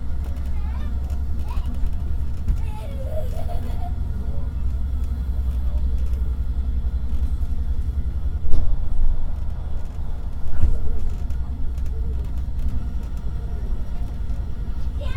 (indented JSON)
{
  "title": "regionalzug, nächster halt schwerte a.d. ruhr",
  "description": "schienengesänge, fahrzeuggeräusche, zugansage\nsoundmap nrw:\nsocial ambiences/ listen to the people - in & outdoor nearfield recordings",
  "latitude": "51.44",
  "longitude": "7.56",
  "altitude": "124",
  "timezone": "GMT+1"
}